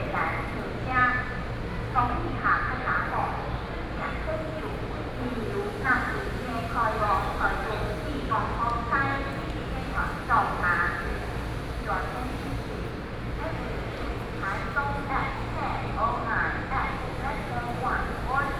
Pingtung Station, Pingtung City - In the station platform
In the station platform